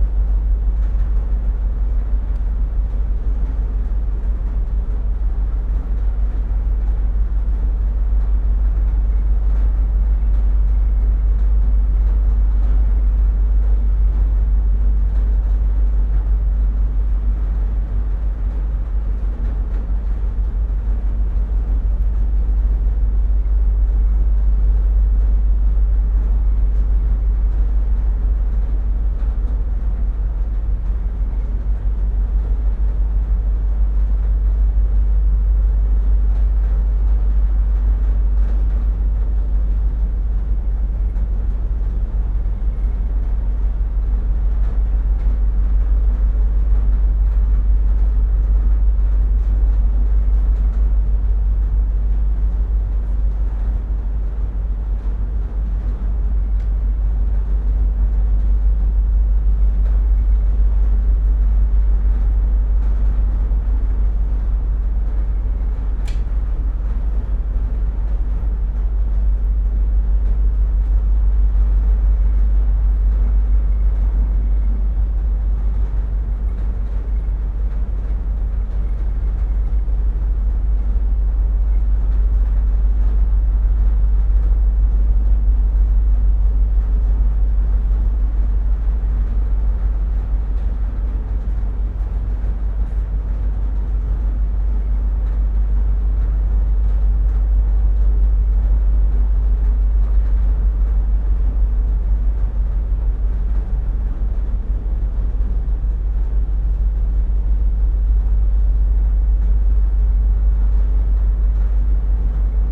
Kennecraig to Port Ellen ferry to Islay ... in motion ... lavalier mics clipped to sandwich box ...